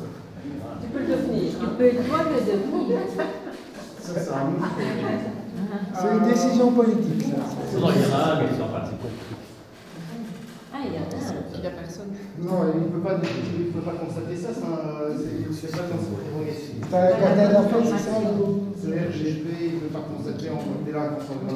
Chaumont-Gistoux, Belgique - Colloquium
A conference is made about the enormous garbage deposits on the river banks in Brabant-Wallon district.
Chaumont-Gistoux, Belgium